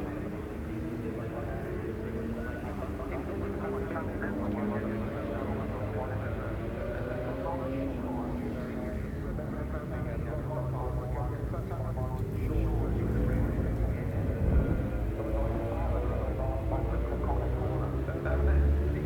Silverstone Circuit, Towcester, UK - World Superbikes 2004 ... superbikes ...
World Superbikes 2004 ... Qualifying ... part two ... one point stereo mic to minidisk ...